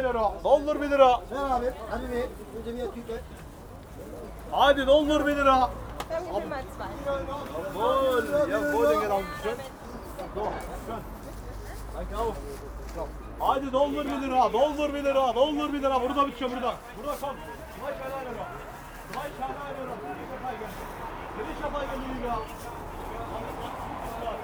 One of busy Turmstraßes most noticeable sounds
Berlin, Germany, 21 September 2011